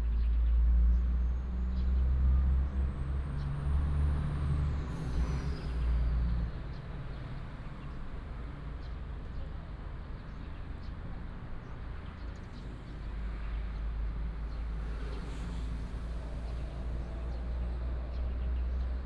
大武漁港環港路, Dawu Township - Beside the fishing port
Beside the fishing port, birds sound, Traffic sound
Binaural recordings, Sony PCM D100+ Soundman OKM II